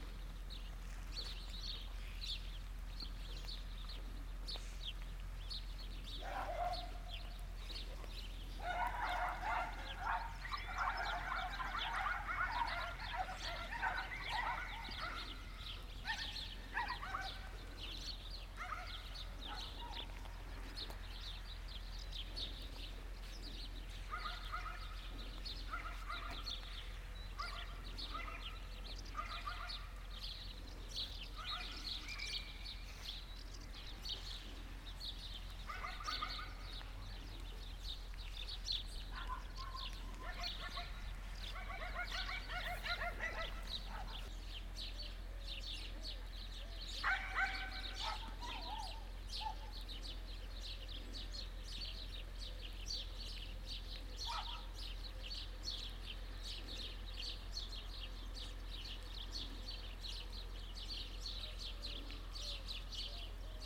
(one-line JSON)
{"title": "Pothières, France - Silo", "date": "2017-07-31 14:20:00", "description": "Near a big wheat silo, rain is trickling from a metallic wall. Just near, some small dogs are barking at every fly taking flight. Sparrows are singing.", "latitude": "47.92", "longitude": "4.52", "altitude": "196", "timezone": "Europe/Paris"}